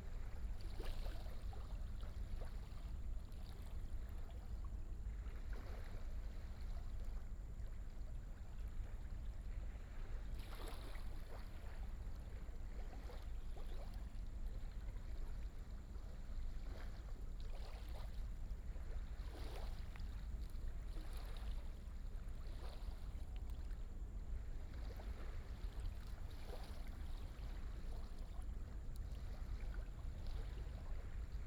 南寮漁港, North Dist., Hsinchu City - tide
In the fishing port, tide, Binaural recordings, Sony PCM D100+ Soundman OKM II
North District, Hsinchu City, Taiwan